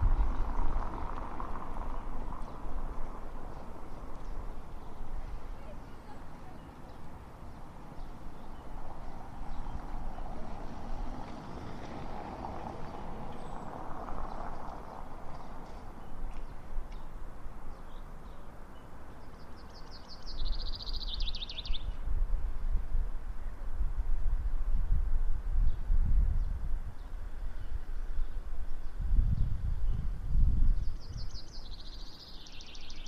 redstart at the entrance to the park